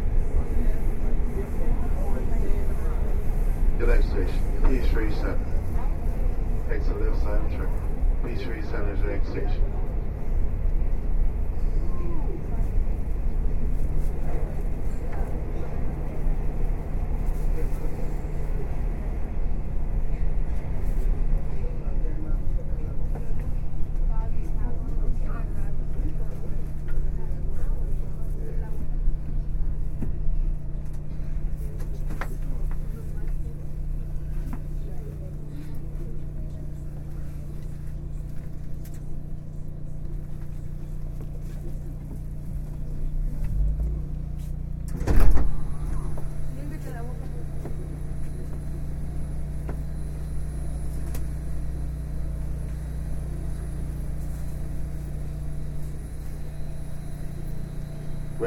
Atlanta, East Lake
MARTA East Lake to North Avenue 10/20/09 0637am
Fulton County, Georgia, United States of America